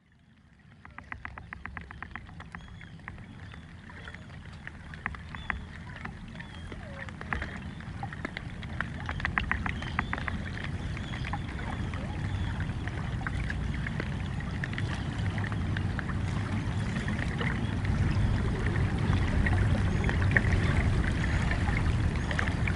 Recorded with a stereo pair of DPA 4060s and a Sound Devices MixPre-3
UK